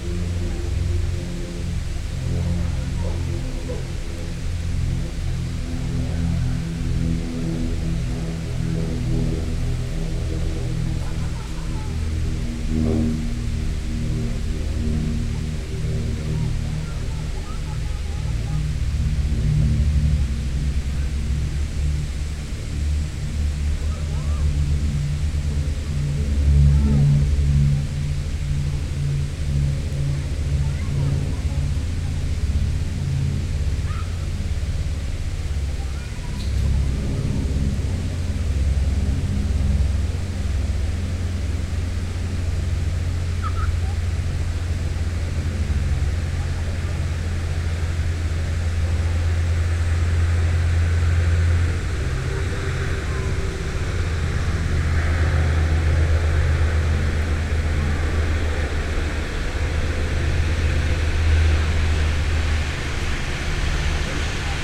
Seven Lakes Dr, Tuxedo, NY, USA - Lake Skannatati - General Ambience
The ambience surrounding Lake Skannatati. Harriman State Park. Many sounds are heard: water running, visitors chatting, bees, cicadas, and road noise.
[Tascam DR-100mkiii & Primo EM-272 omni mics]
Orange County, New York, United States, 25 August 2021